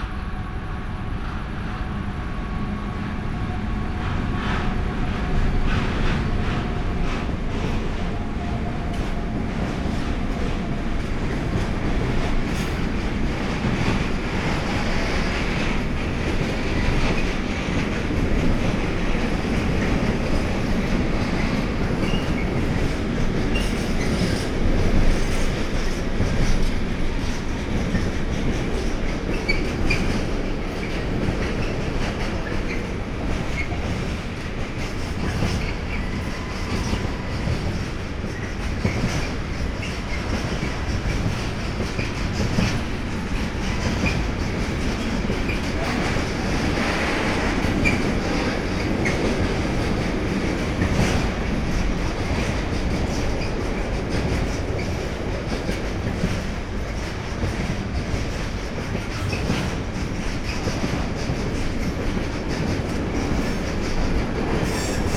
Mediapark, Köln - pedestrian bridge, trains, night ambience
Köln, Mediapark, pedestrian bridge, busy train traffic here all day and night
(Sony PCM D50, Primo EM172)